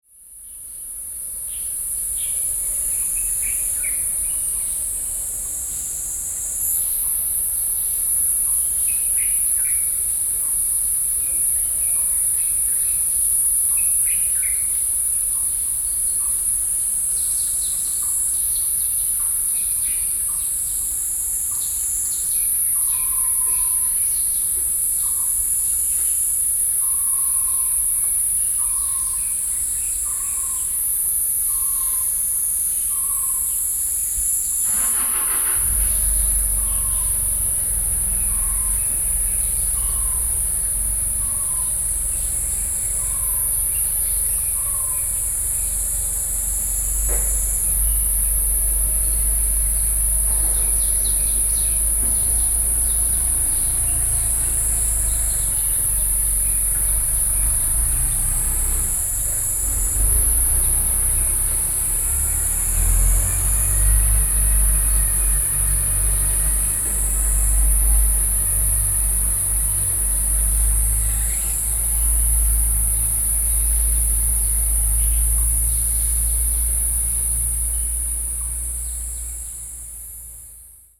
北投區桃源里, Taipei City - Morning in the park
Morning in the park, Sony PCM D50 + Soundman OKM II
台北市 (Taipei City), 中華民國, 22 June 2012